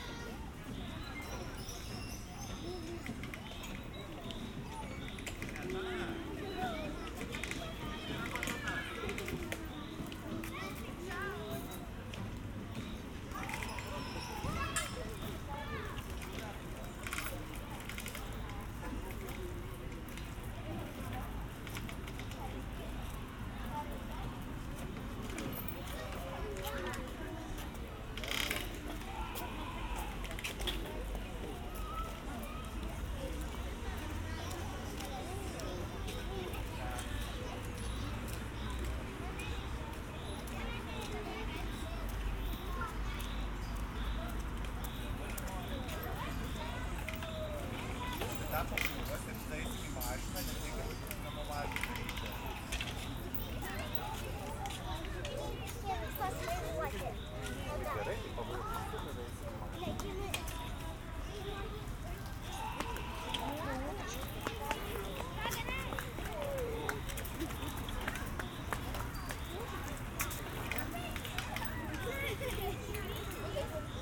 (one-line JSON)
{"title": "Ventspils, Latvia, kids park", "date": "2021-07-14 20:05:00", "description": "Evening in Ventspils KIds Park. Sennheiser ambeo headset recording", "latitude": "57.39", "longitude": "21.55", "altitude": "13", "timezone": "Europe/Riga"}